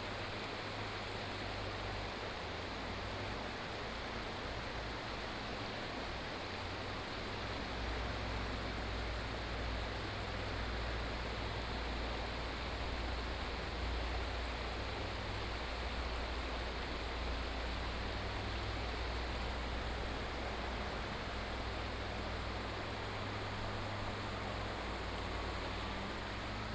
stream, On the bridge, Lawn mower, Binaural recordings, Sony PCM D100+ Soundman OKM II